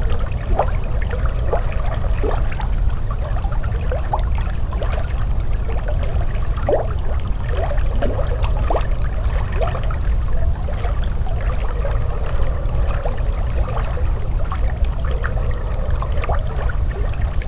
J. Basanavičiaus g., Utena, Lithuania - river rase
8 March 2019, 15:38